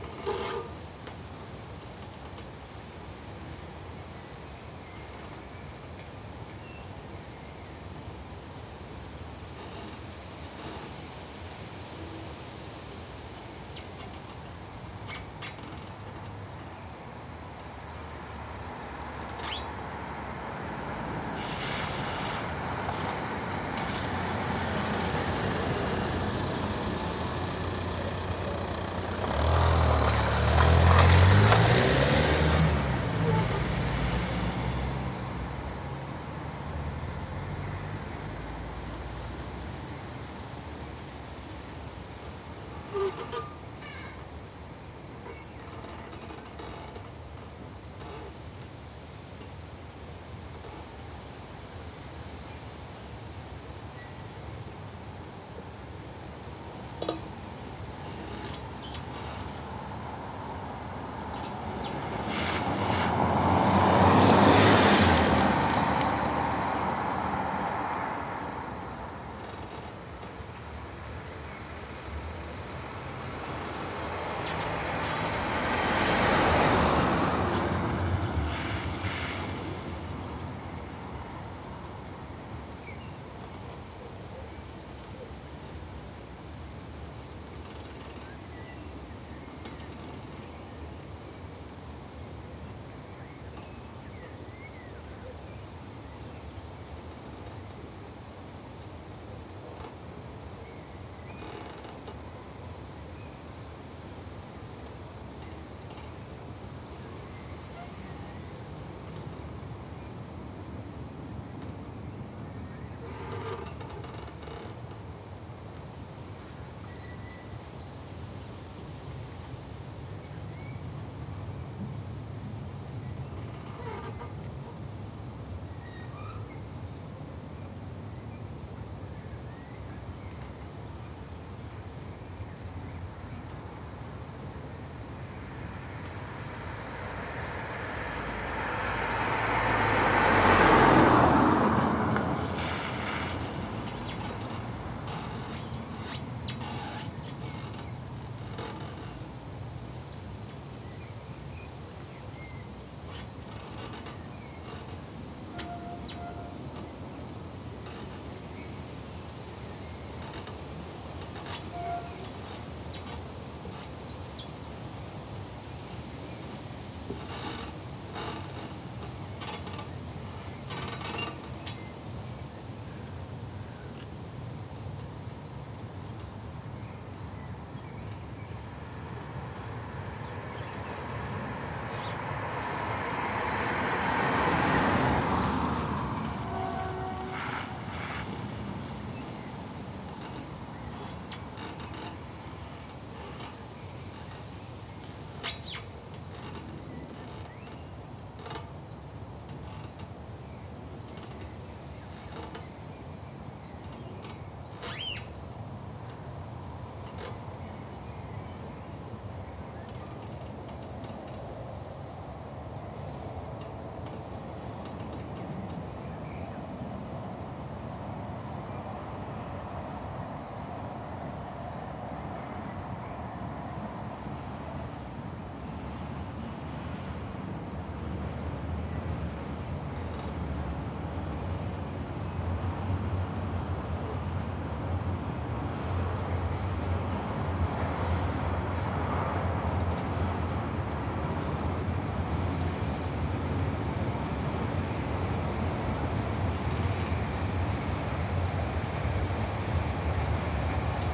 Diegem, Machelen, Belgium - Creaky fence and tree duet
Outside an abandoned factory, walking on the street, I heard a creaking sound. The sound was being produced in a place where a steel fence and a young tree have become tangled together. When the wind passes through, the tree and the fence rub against each other and the friction produces a particular sound. Close by, there is a railway track; a train enters the recording at some point and you can hear its horn. Also, the derelict factory which is near to the creaky tree is visited very regularly by folks who want to dump old stuff; you can hear their cars passing close by, and quite fast too. Finally, the abandoned factory is itself very creaky and sonorous, and so the sounds of it rattling in the wind are also present here. To make this recording, I strapped the EDIROL R-09 very close to the place where the creaking sound was happening, using cable ties. I then left it there for a while to document the sonic situation.
Vlaams-Brabant, Vlaams Gewest, België - Belgique - Belgien